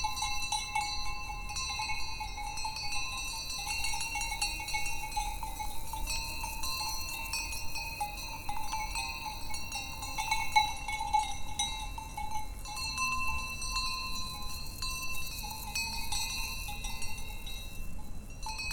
Saint-François-de-Sales, France - Quelques cloches de vaches
Quelques vaches dans une prairie, les insectes dans les herbes.
Auvergne-Rhône-Alpes, France métropolitaine, France, 19 August 2016, 18:30